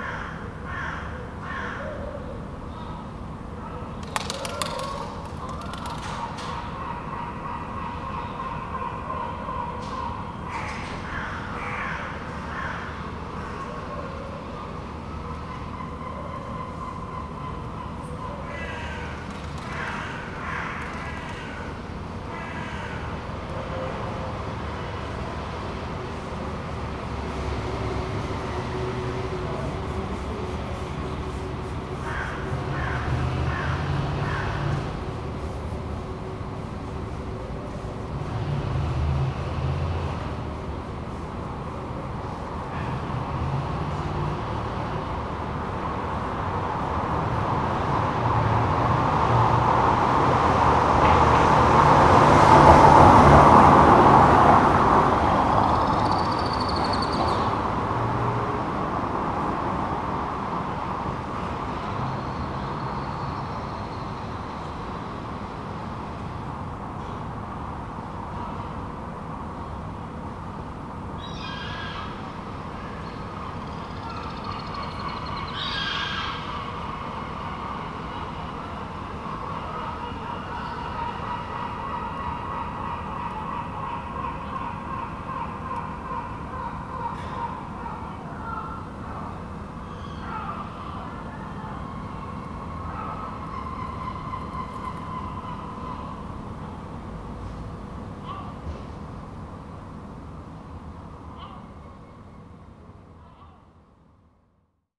PUHU Otel, Kadıköy, İstanbul, Turkey - 922 AB sunday morning atmosphere
Sunday morning atmosphere recorded from a window of a hotel room (2nd floor).
AB stereo recording made from internal mics of Tascam DR 100 MK III.